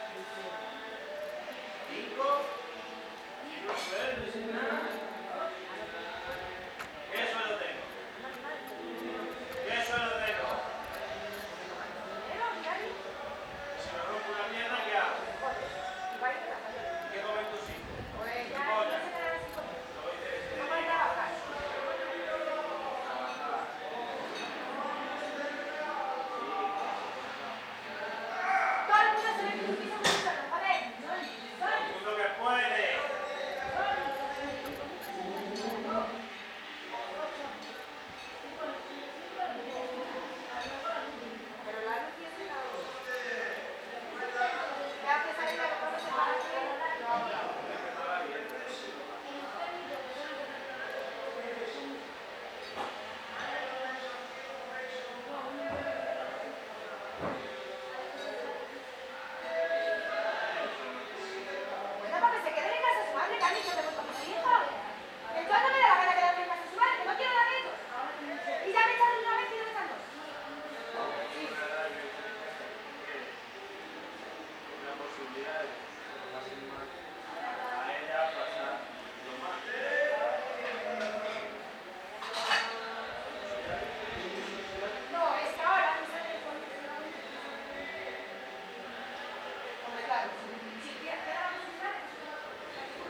Madrid, Spain - Madrid courtyard arguments
Cheap hotel in Madrid, arguments between woman and husband, singing...
sony MS microphone. Dat recorder